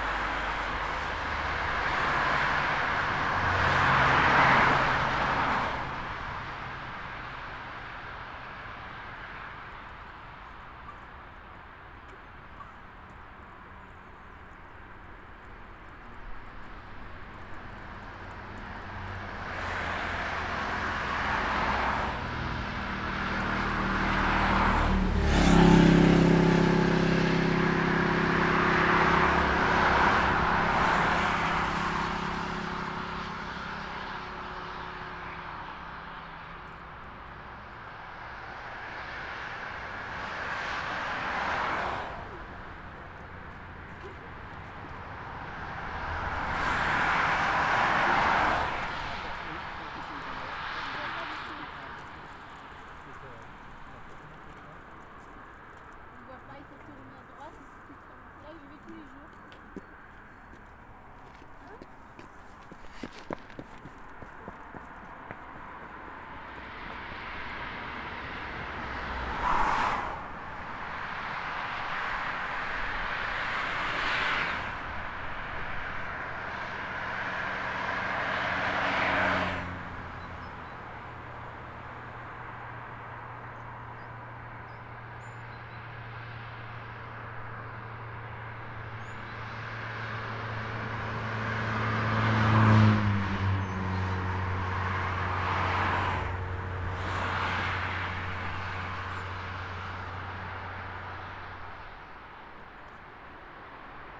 Солитьюд, Штутгарт, Германия - Walking around Akademie Schloss Solitude
The Akademie Schloss Solitude and neighborhood: forest, vehicles, castle visitors.
Roland R-26. Early Spring.